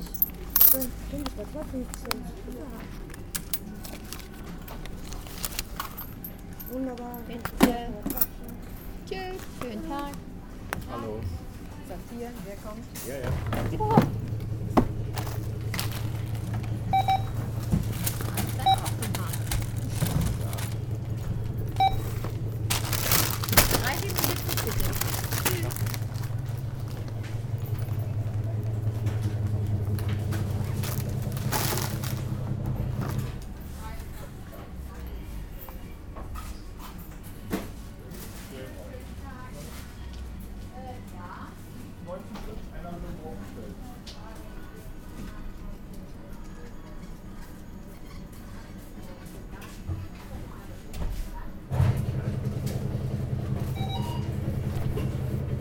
refrath, lustheide, supermarkt
mittags, betrieb an kasse im supermarkt
soundmap nrw - social ambiences - sound in public spaces - in & outdoor nearfield recordings